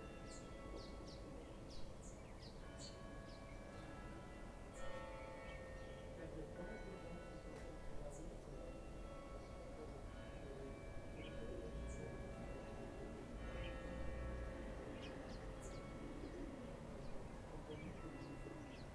Alsace Corré, Réunion - Le carillon de CILAOS avant le concert des Lycos (cest un sacré groupe!)
Comptage des hélicos entre 08h42 et 10h22 sur ce fichier son, soit 1h40 durée: 4 ULM + 1 autogire + 18 hélico tripale + 2 hélicos bipale, soit 23 survols d'aéronef. Parmi les hélicos tripales, 3 de type EC130B4 (similaire H130) et les autres sont des AS350 probablement "B3" Type "Écureuil": les nuisances aériennes se sont intensifié bouffant désormais la seconde partie de la matinée, bien au delà de 9h30 du matin. En janvier février 2020 ça semble un mauvais souvenir, mais c'est par ce qu'il pleut souvent ou que les chinois ont "le rhûme", on n'a pu que constater une dégradation qui a atteint le sommet en novembre 2019, même si les survols font moins de bruit (un peu de précautions tout de même), c'est l'invasion temporelle (ça n'en finit plus) le problème: on n'entend plus la nature, et le carillon est arrêté depuis 2014)...
Moins
La Réunion, France, 26 April 2014